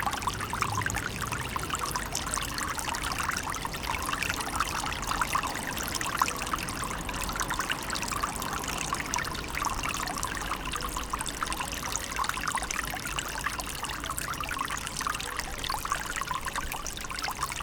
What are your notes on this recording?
Fontanna Skwer Herbeta C. Hoovera